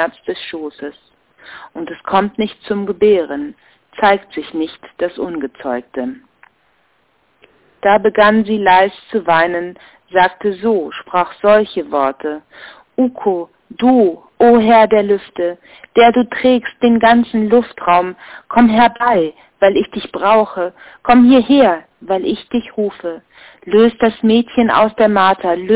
Kalevala, Wassermutter - Kalevala, Wassermutter 22.04.2007 21:49:55